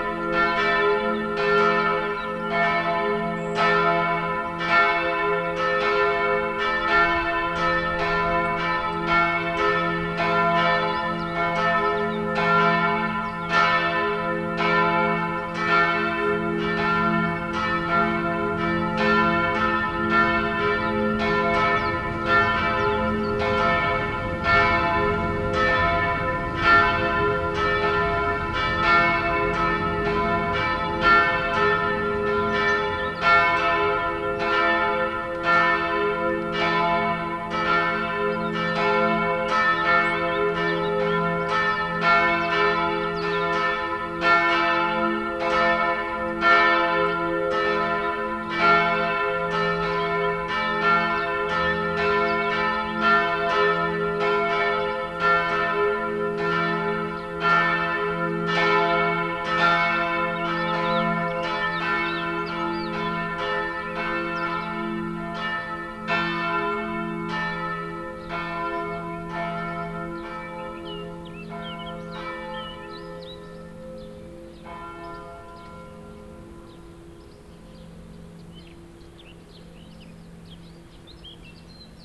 Noon bells at Domske namesti in Litomerice. A spring soundscape in the centre of a small historical town.
jiri lindovsky